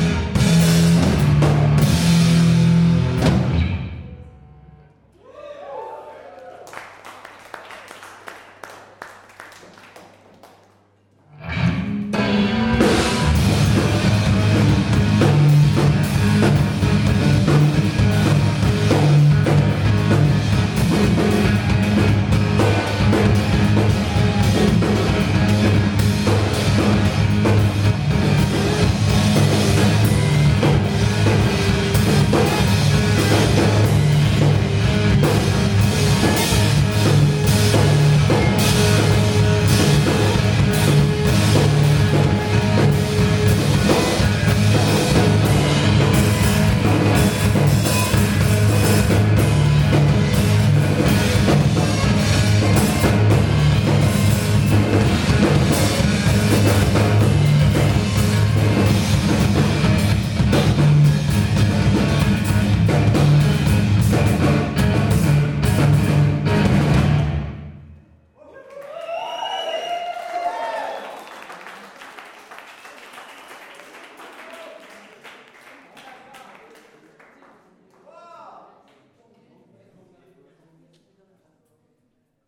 John Makay playing in Improbable concert Place Lorette / Marseille
concert by the duo guitar/drum John Makay _ organised by Limprobable in my working place.